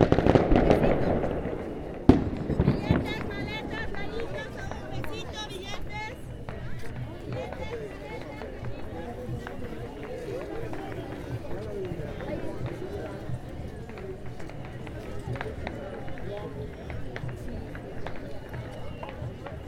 Parque Urbano Central, La Paz Municipality, Bolivia - Alasitas 2012
Por Oscar Garcia